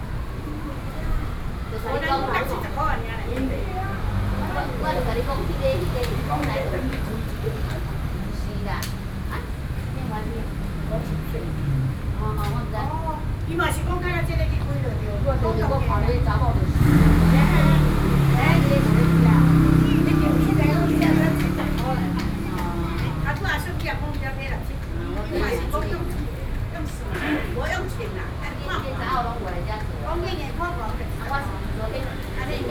Wenzhou Park, Da’an Dist. - A group of old woman in the park
A group of old woman in the park
Zoom H4n+ Soundman OKM II